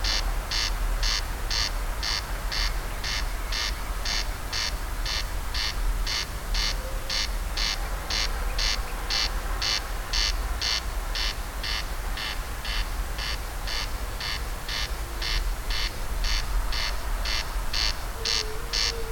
Berneray - Berneray night. Corncrakes & seals
A late summer night on Berneray, Outer Hebrides. Corncrakes and seals in the distance. Stereo recording made on DPA 4060's.